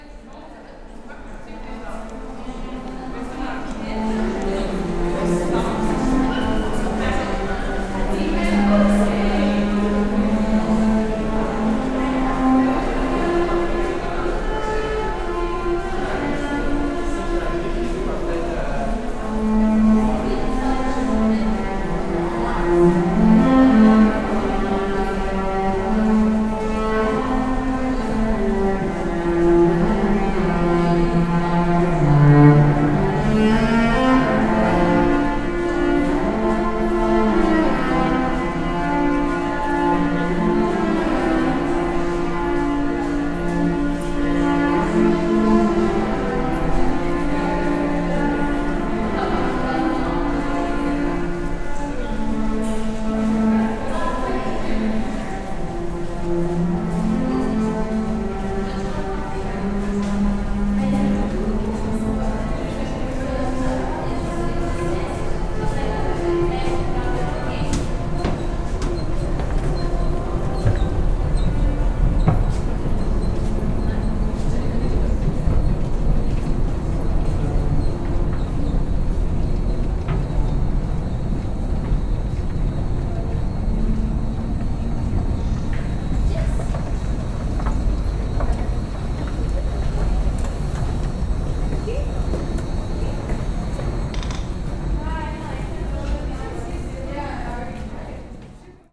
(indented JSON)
{
  "title": "Montreal: Berri-UQAM metro (cello) - Berri-UQAM metro (cello)",
  "date": "2008-10-09 11:09:00",
  "description": "equipment used: M-Audio MicroTrack II\ncello in Berri-UQAM metro tunnel",
  "latitude": "45.51",
  "longitude": "-73.56",
  "altitude": "27",
  "timezone": "America/Montreal"
}